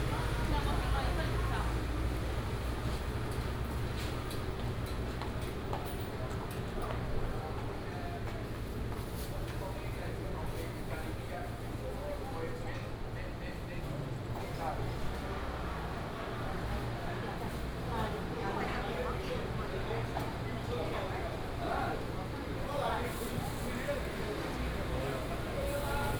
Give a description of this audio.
End Time for business, Traffic Sound, Walking through the market